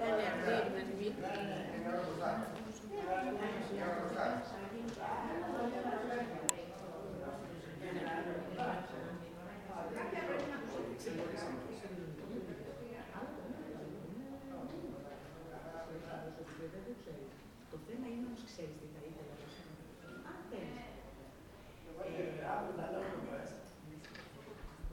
Lili Dessyla, Corfu, Greece - Lili Dessyla Square (Venetian Well) - Πλατεία Λίλη Δεσσύλα (Βενετσιάνικο Πηγάδι)
Locals chatting.
ZOOM: H4N